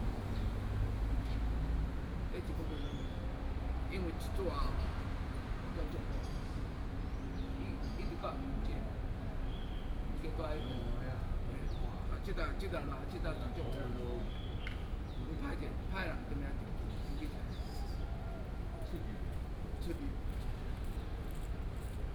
龍圖公園, Da’an Dist., Taipei City - Morning in the park
Morning in the park